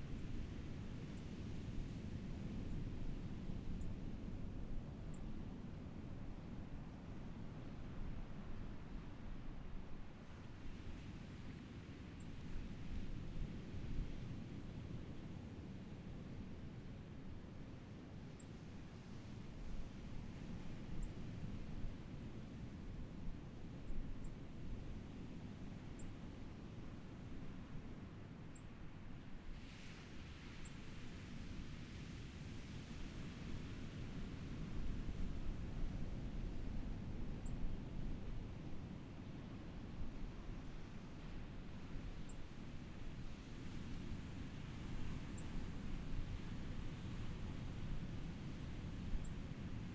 坂里國民小學, Beigan Township - sound of the waves
Sound of the waves, Very hot weather, Chicken sounds from afar
Zoom H6 XY